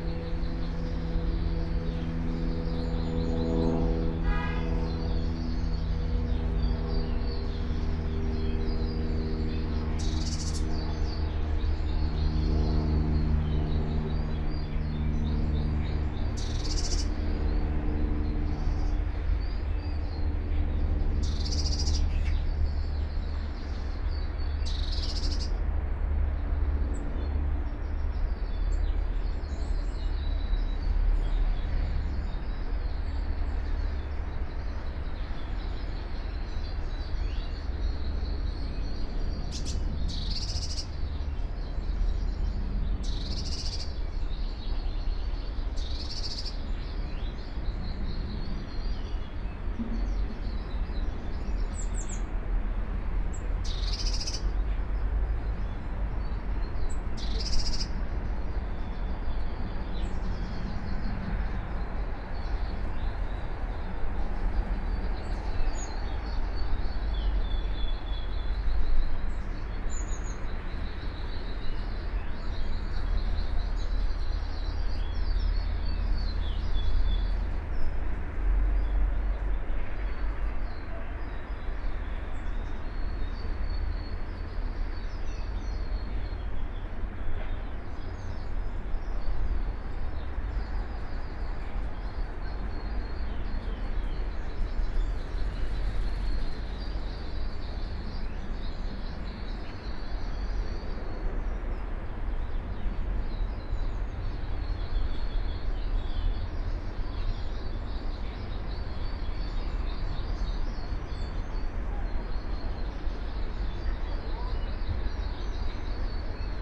Cressingham Rd, Reading, UK - Reading Buddhist Priory Garden Ambience
Ten minute ambience of Reading Buddhist Priory's garden (Spaced pair of Sennheiser 8020s + SD MixPre6)